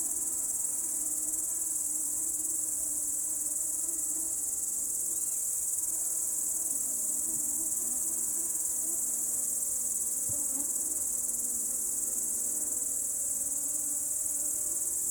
mosquitoes, crickets and deer, South Estonia
mosquitoes attacking my windscreens while recording evening insect sounds, then a deer crosses in front and starts barking
Põlvamaa, Estonia